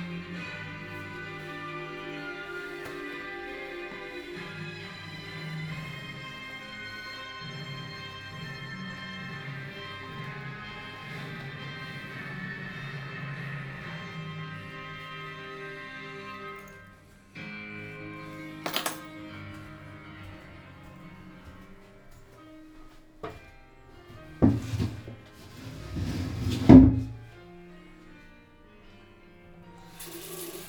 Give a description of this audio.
"Morning (far) walk AR with break in the time of COVID19" Soundwalk, Chapter CXVIII of Ascolto il tuo cuore, città. I listen to your heart, city, Thursday, July 16th, 2020. Walk to a (former borderline far) destination. Round trip where the two audio files are joined in a single file separated by a silence of 7 seconds. first path: beginning at 11:13 a.m. end at 11:41 a.m., duration 27’42”, second path: beginning at 11:57 p.m. end al 00:30 p.m., duration 33’00”, Total duration of recording: 01:00:49, As binaural recording is suggested headphones listening. Both paths are associated with synchronized GPS track recorded in the (kmz, kml, gpx) files downloadable here: first path: second path: Go to Chapter LX, Wednesday, April 29th, 2020: same path and similar hours.